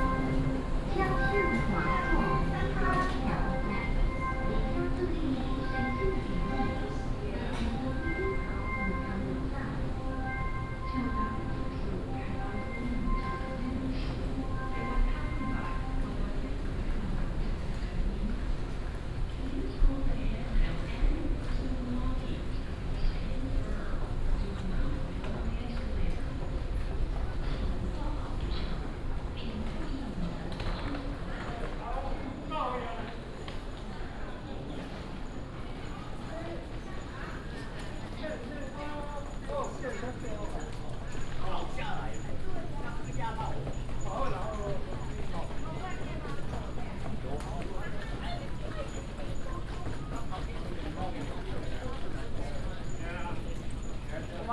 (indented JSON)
{
  "title": "Chiayi Station, Taibao City, Taiwan - walking in the Station",
  "date": "2017-04-18 08:17:00",
  "description": "In the station, From the station platform to the station hall",
  "latitude": "23.46",
  "longitude": "120.32",
  "altitude": "14",
  "timezone": "Asia/Taipei"
}